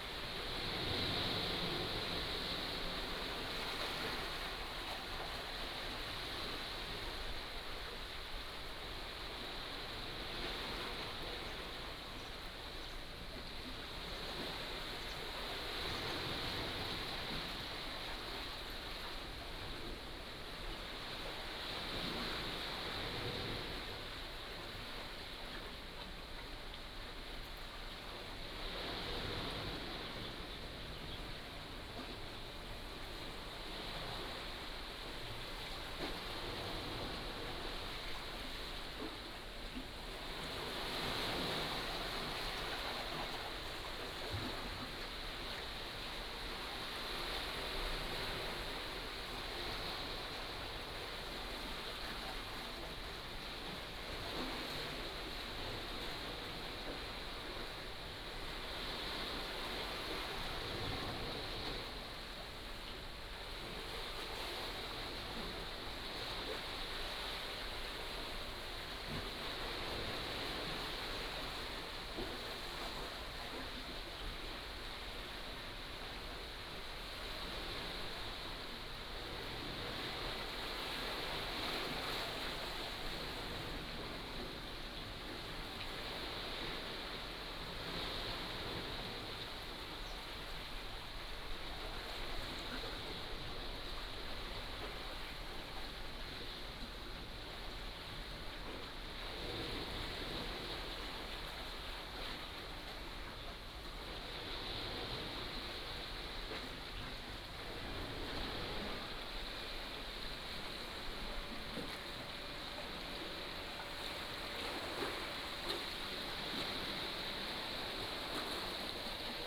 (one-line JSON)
{"title": "橋仔村, Beigan Township - Waves and Tide", "date": "2014-10-15 13:03:00", "description": "Below the house, Sound of the waves, Small fishing village", "latitude": "26.23", "longitude": "119.99", "altitude": "17", "timezone": "Asia/Taipei"}